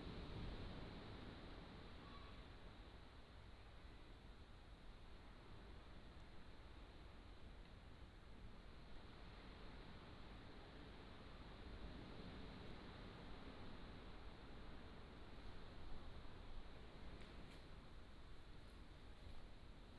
牛角, Nangan Township - Walking in the temple
Walking in the temple, Sound of the waves, Dogs barking